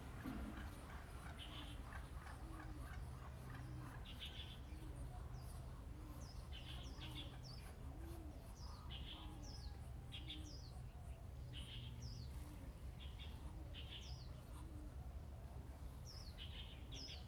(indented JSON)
{
  "title": "天福村, Hsiao Liouciou Island - Crowing and Birds singing",
  "date": "2014-11-02 08:22:00",
  "description": "Crowing sound, Birds singing\nZoom H6 +Rode NT4",
  "latitude": "22.33",
  "longitude": "120.36",
  "altitude": "37",
  "timezone": "Asia/Taipei"
}